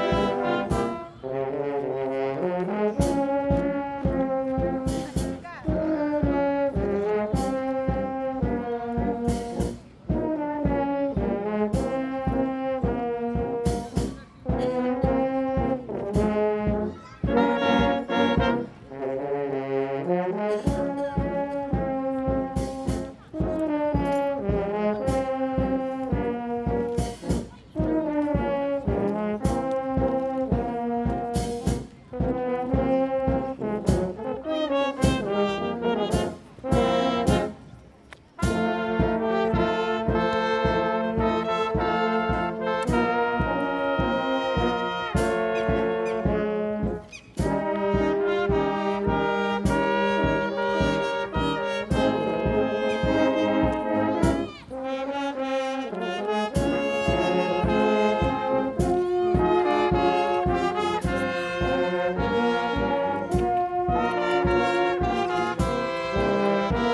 Площа Перемоги, Костянтинівка, Донецька область, Украина - Игра летнего духового оркестра
Звуки сквера и игра духового оркестра